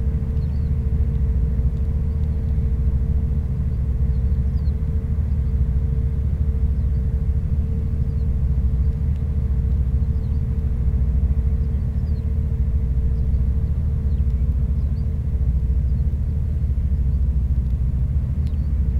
Fishing vessels going to the mussels, early on the morning during the very low tide. The sound is deaf.